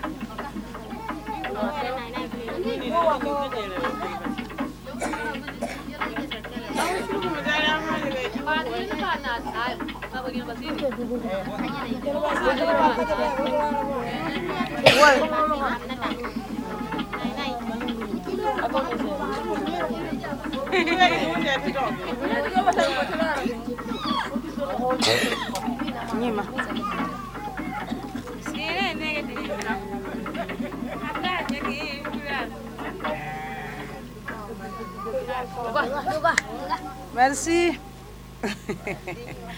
reaction apres le documentaire sur anta
un an aprés le tournage anta une femme entre deux monde retour à dinangourou pour visionner le film
avec anta
Mopti, Mali